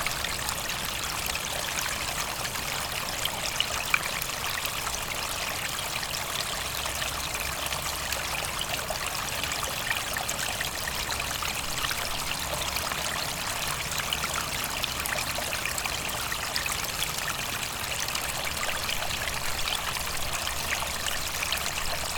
Warren Landing Rd, Garrison, NY, USA - Small Stream of Water
Recording of a small stream of water next to the Constitution Marsh Audubon Center.
29 February, ~16:00